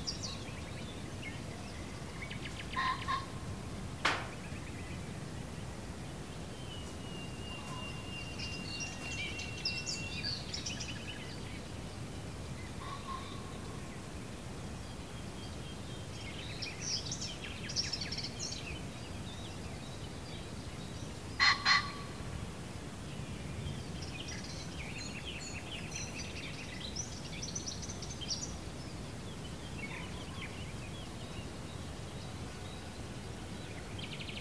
Mali pasman, Mali Pašman, Croatia - birds
recorded on Sunday morning, at dawn, at the International Dawn Chorus Day, The Dawn Chorus is the song of birds at around sunrise...
3 May, ~06:00